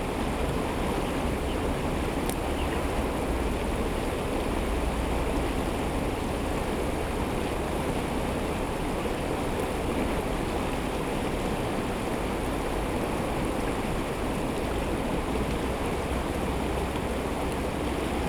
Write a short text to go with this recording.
Beside the creek, Stream sound, Traffic sound, Birds sound, Binaural recording, SoundDevice MixPre 6 +RODE NT-SF1 Bin+LR